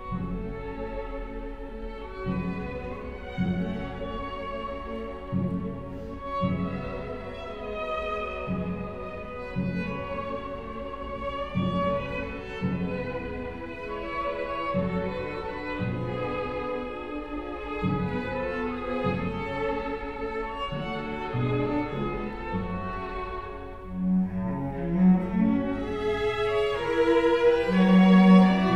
Palazzo del Governo, Rijeka, string quartet
String quartet Dominant from Moscow
Rijeka, Croatia, 5 March 2010